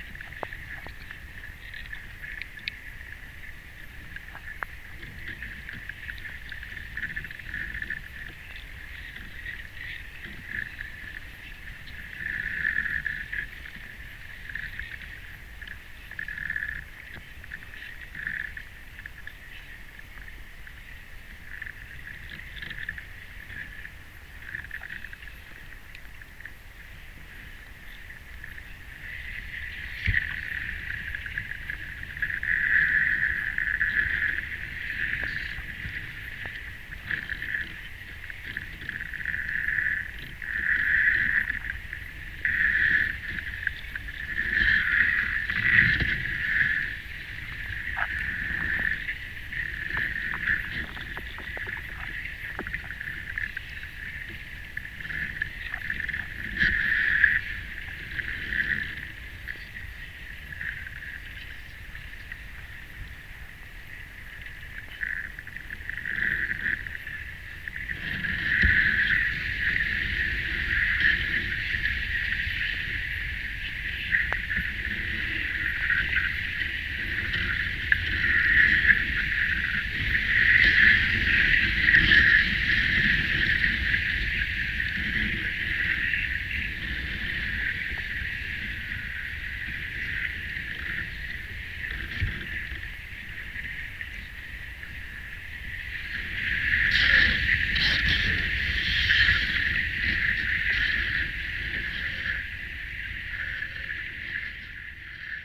Alausai, Lithuania, reeds underwater
Hydrophone unwater between the reeds